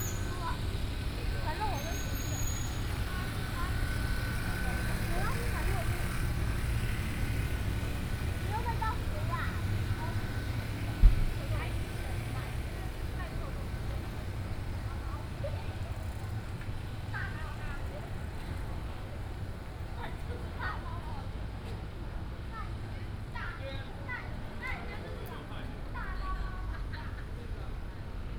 Walking in a small alley, Traffic Sound, Hot weather, Air conditioning, sound
Taipei City, Taiwan